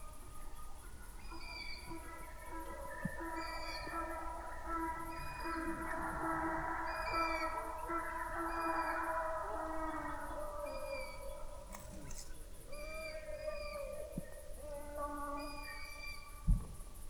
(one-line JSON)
{"title": "Winkel, Kleinzerlang, Deutschland - night ambience, crickets, owls, a donkey", "date": "2019-07-10 23:30:00", "description": "village Kleinzerlang, night ambience, owl calls (Asio otus), unreal scream of a donkey at 1:20, an unidentified animal strolling in the grass nearby, could be a raccoon or badger... and some digesting sounds of the recordist... noisy recording, slightly surpressed in audacity\n(Sony PCM D50, Primo EM172)", "latitude": "53.19", "longitude": "12.92", "altitude": "60", "timezone": "Europe/Berlin"}